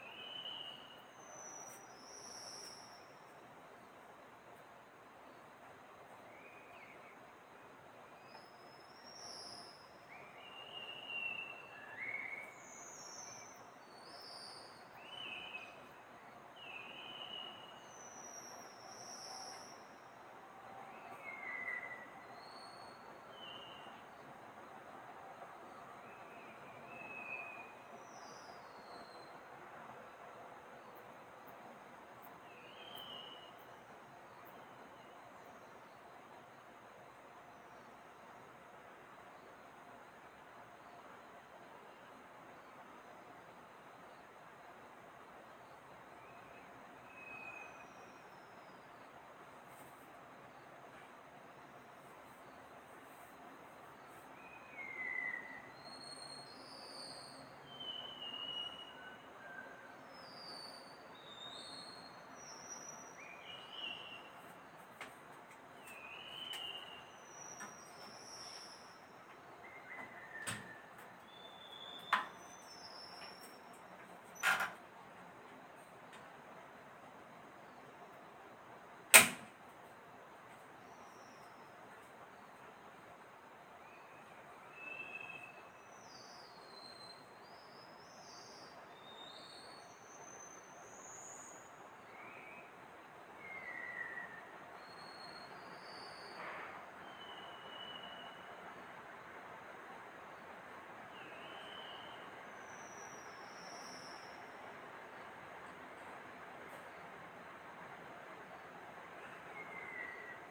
Place:
Ruifang, a place surrounded by natural enviroment.
Recording:
Taiwan Whistling Thrush's sound mainly.
Situation:
Early at morning, before sunrise.
Techniques:
Realme narzo A50